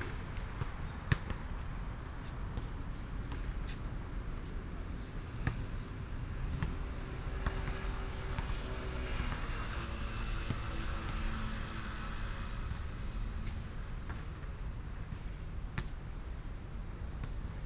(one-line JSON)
{"title": "東大路二段16號, Hsinchu City - basketball and traffic sound", "date": "2017-09-27 17:12:00", "description": "Next to the basketball court, traffic sound, Binaural recordings, Sony PCM D100+ Soundman OKM II", "latitude": "24.81", "longitude": "120.97", "altitude": "21", "timezone": "Asia/Taipei"}